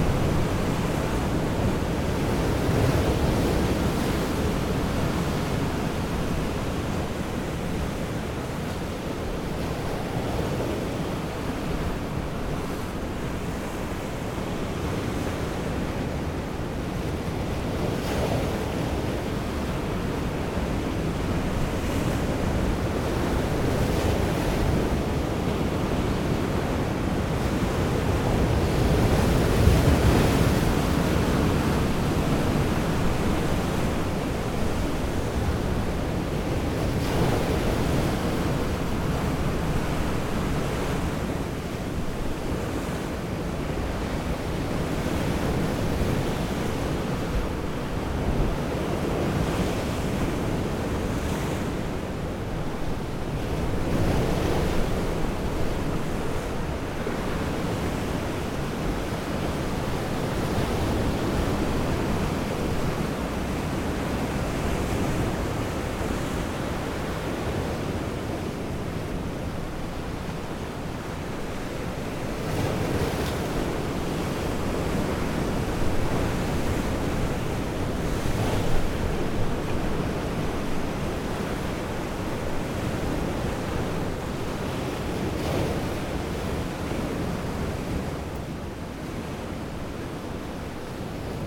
Ploumanach, lighthouse, France - Heavy waves on a rock [Ploumanach]
les vagues s'écrasent contre les rochers. distance moyenne.
The waves crash against the rocks. average distance.
April 2019.
Bretagne, France métropolitaine, France